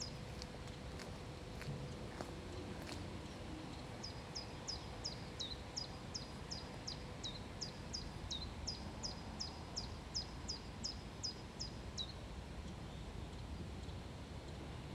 Antonienstraße, Bitterfeld-Wolfen, Deutschland - chemical nature
A recording of a seemingly intact natural habitat dwelling over chemical waste in the polluted grounds of Bitterfeld. If you go down to the ground, you get the smell of strange evaporations.
Binaural mix from an ambisonic recording with a Sennheiser Ambeo